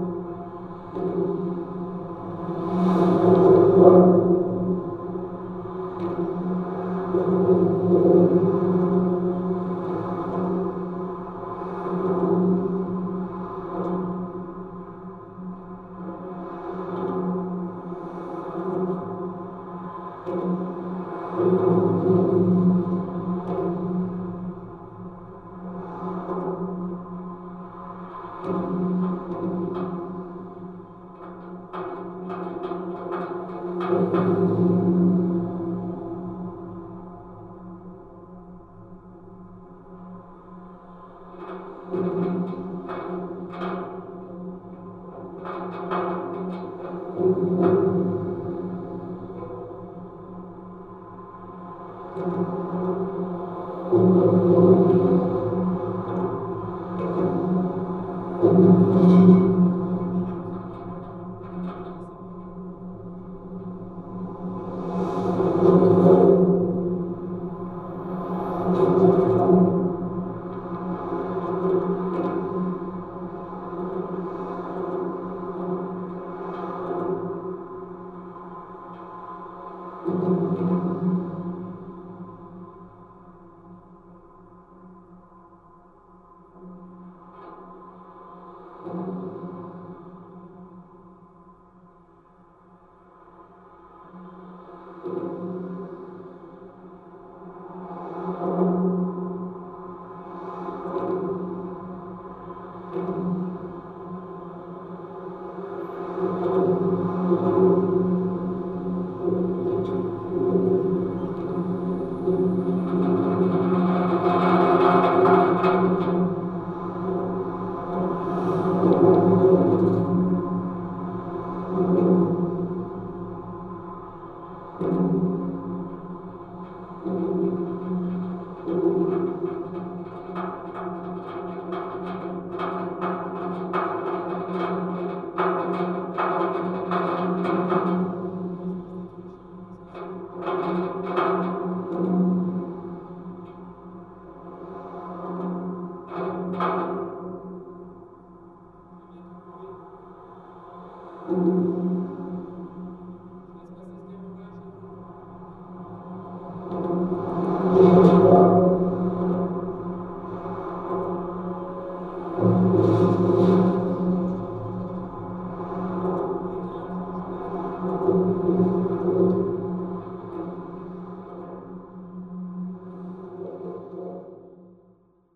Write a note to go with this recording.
The Tancarville bridge, recorded with contact microphones. This is the inside life of this bridge, and especially the two huge cables which prop the bridge structure.